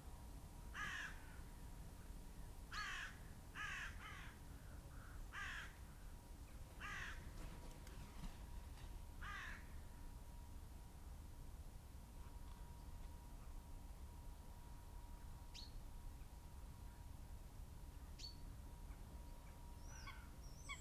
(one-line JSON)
{"title": "Luttons, UK - corvids and raptors soundscape ...", "date": "2016-12-18 08:30:00", "description": "Corvids and raptors soundscape ... bird calls ... buzzard ... peregrine ... crow ... rook ... yellowhammer ... skylark ... blackbird ... open phantom powered lavalier mics clipped to hedgerow ... background noise ...", "latitude": "54.12", "longitude": "-0.56", "altitude": "92", "timezone": "Europe/London"}